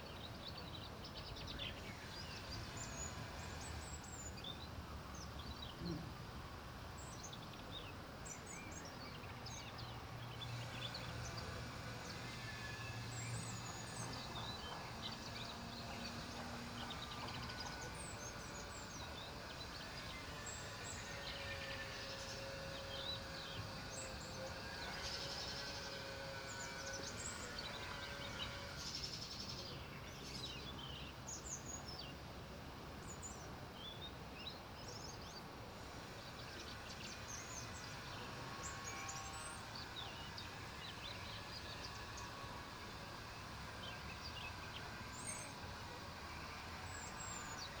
Rab, park, San Marino
birds in park and some noise
Primorsko-Goranska županija, Hrvatska